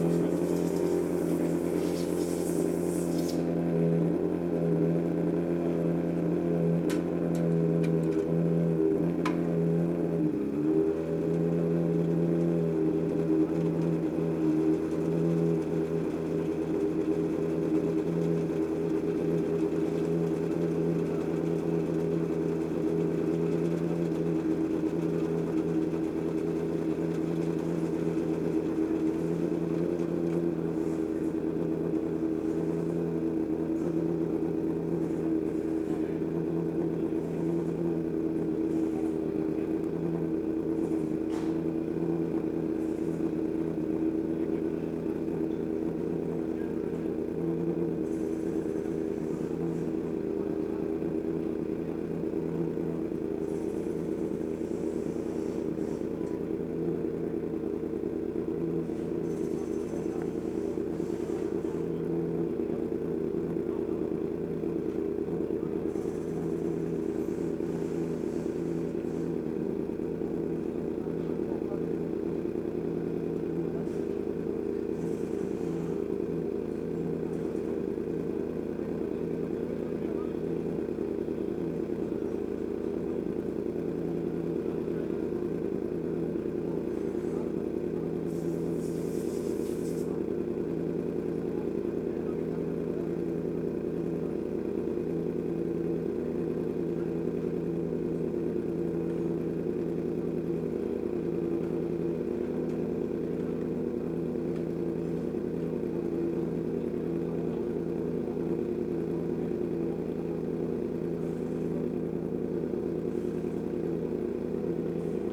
day of champions ... silverstone ... pit lane walkabout ... rode lavaliers clipped to hat to ls 11 ...